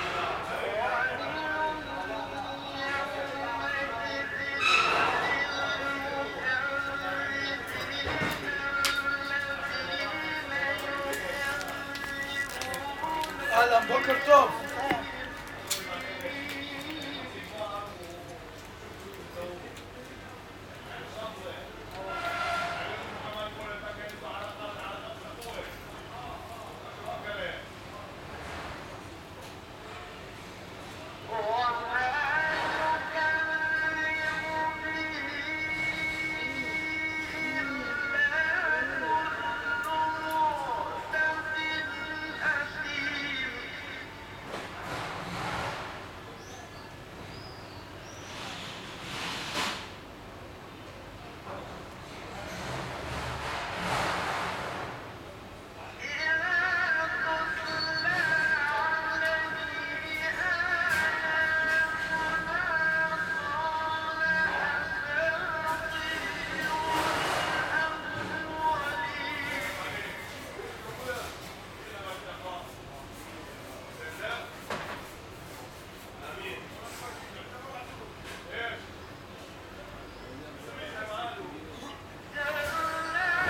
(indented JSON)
{
  "title": "Binyamin mi-Tudela St, Acre, Israel - Market in the morning, Acre",
  "date": "2018-05-03 08:52:00",
  "description": "Alley, Market, Muazin, Good-morning, Arabic, Hebrew",
  "latitude": "32.92",
  "longitude": "35.07",
  "altitude": "9",
  "timezone": "Asia/Jerusalem"
}